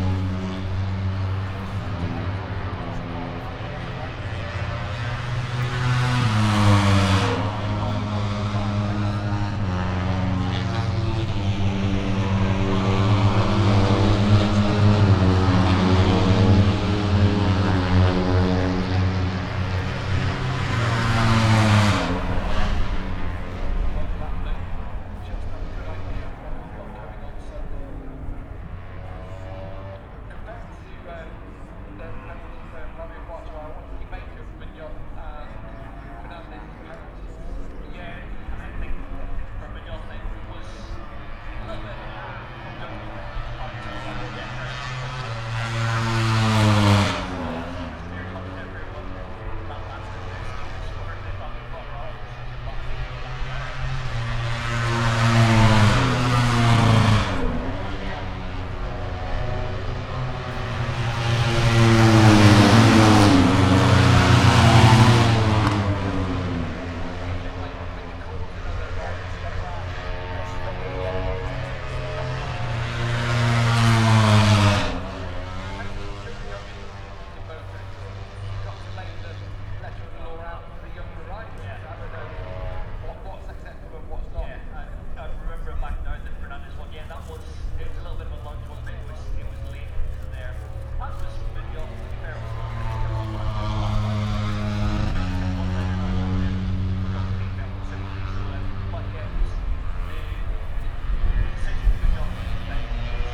british motorcycle grand prix ... moto three free practice two ... dpa 4060s on t bar on tripod to zoom f6 ...